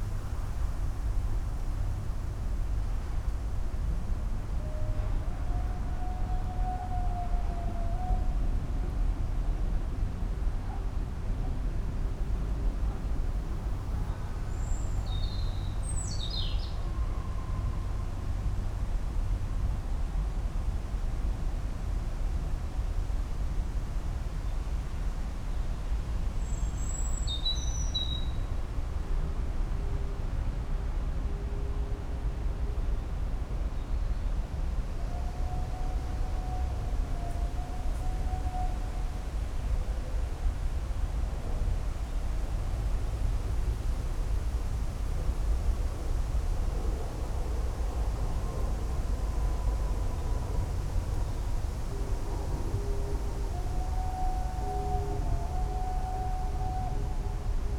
soundscape at new jewish cemetery. sounds of the nearby container station in the background